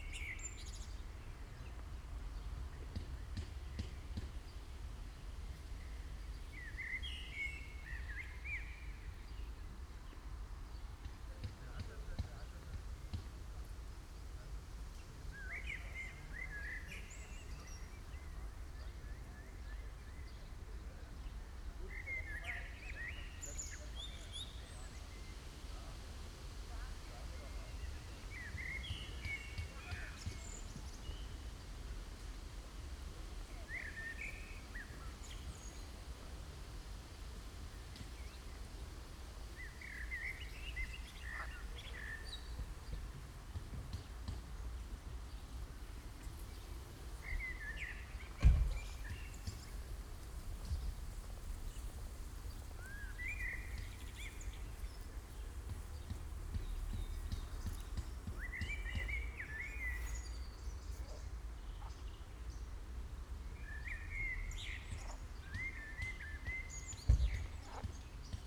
Wuhle, Ahrensfelde, Deutschland - residential area, pond ambience
the river Wuhle near its source in Ahrensfelde, just beyond the city border of Berlin. The river is almost invisible here, no flow, just a few wet areas and ponds.
(SD702, DPA4060)
Ahrensfelde, Germany, 23 May, 15:45